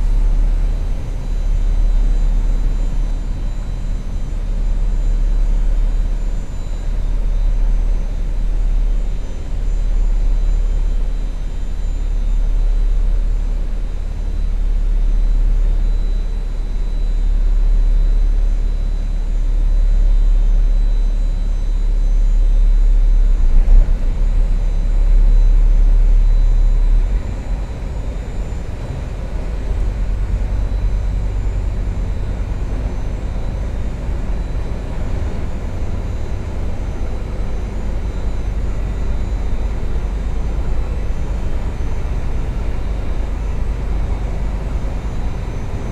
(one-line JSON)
{"title": "Charleroi, Belgium - Industrial soundscape", "date": "2018-08-15 07:45:00", "description": "Industrial soundscape near the Thy-Marcinelle wire-drawing plant. Near the sluice, in first a bulldozer loading slag, after a boat entering (and going out) the sluice. The boat is the Red Bull from Paris, IMO 226001090.", "latitude": "50.41", "longitude": "4.43", "altitude": "100", "timezone": "GMT+1"}